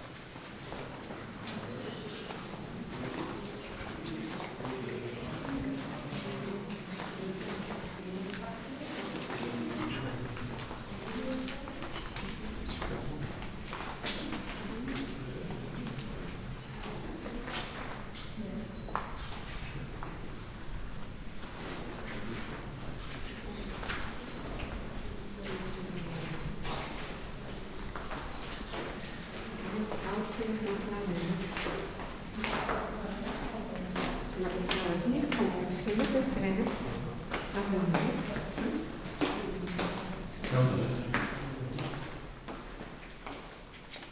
vaison la romaine, roman church, cloister
Walking on the cloister of the historic church. The sound of footsteps and visitors talking.
international village scapes - topographic field recordings and social ambiences